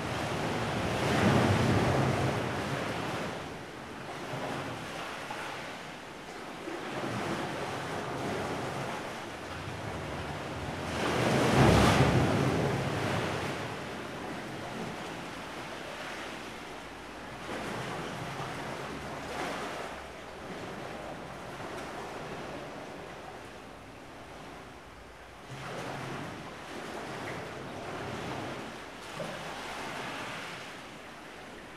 Saint-Clément-des-Baleines, France - blockhaus